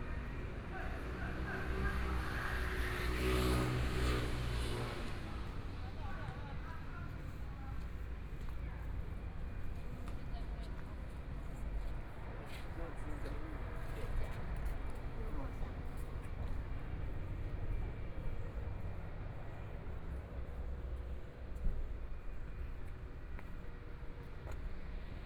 Dizheng Rd., Xihu Township - Walking on the street
Walking on the street, In convenience stores, Traffic Sound, Zoom H4n+ Soundman OKM II